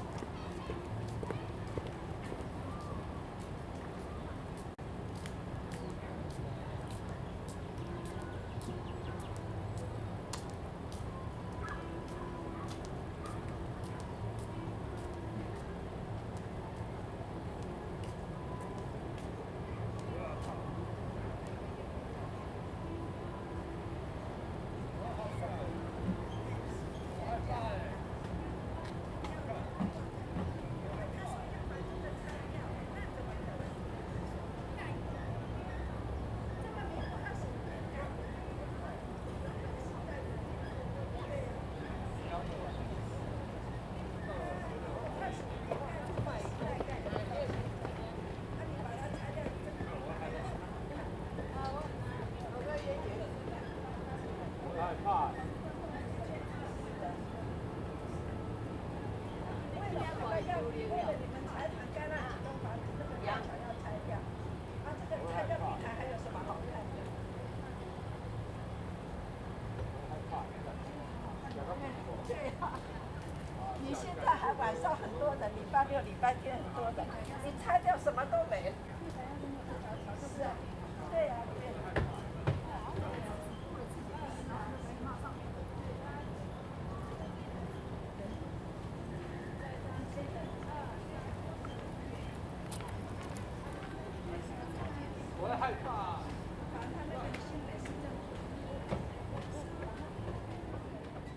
碧潭風景區, 新店區, New Taipei City - Visitor
Holiday and Visitor, Footsteps
Zoom H2n MS+ XY
New Taipei City, Taiwan, 28 July 2015